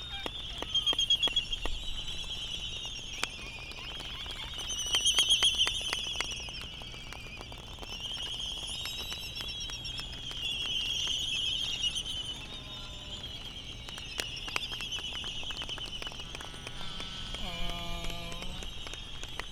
United States Minor Outlying Islands - Laysan albatross soundscape ...
Laysan albatross soundscape ... Sand Island ... Midway Atoll ... laysan calls and bill clapperings ... warm ... slightly blustery morning ...